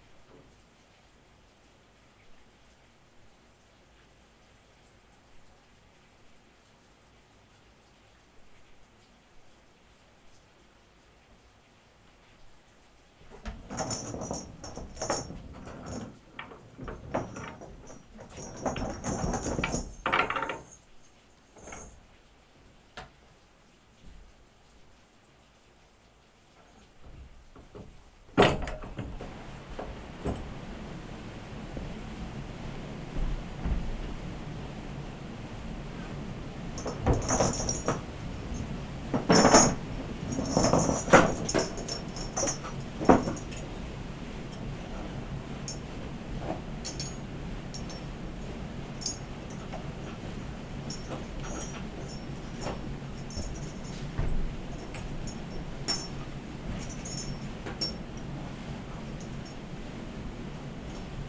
Recording from inside the old water mill at Husån rapids, Trehörningsjö. The mill is of the type called skvaltkvarn with horizontal millstones. The recordings starts just before the water stream is lead to the mill wheel, and then during the water is streaming and turning the millstone. Recording was made during the soundwalk on the World Listening Day, 18th july 2010.